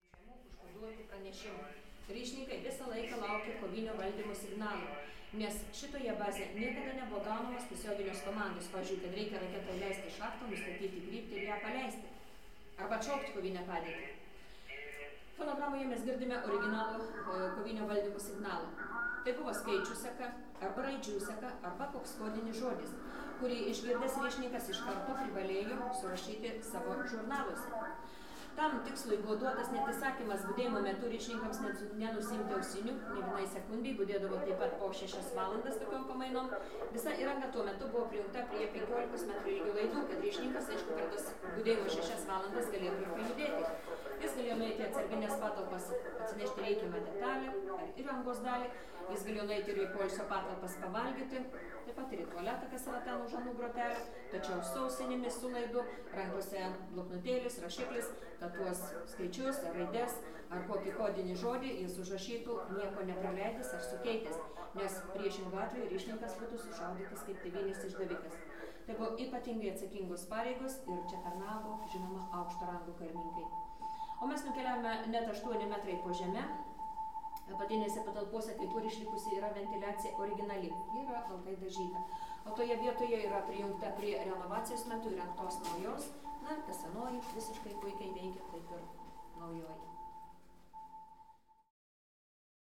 Cold War Museum in the former nuclear missiles base.
Lithuania, 17 August 2016, ~11:00